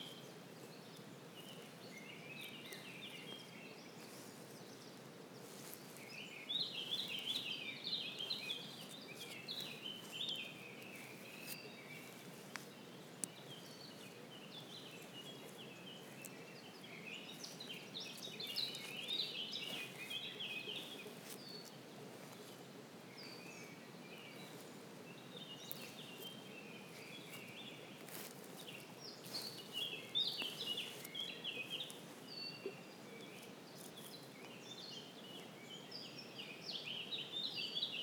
Unnamed Road, Pont-de-Montvert-Sud-Mont-Lozère, France - SoundWalk in Forest Lozère 2020
Janvier 2020 - Lozère
SoundWalk forest winter river and wind in the summits
ORTF DPA 4022 + Rycotte + PSP3 AETA + edirol R4Pro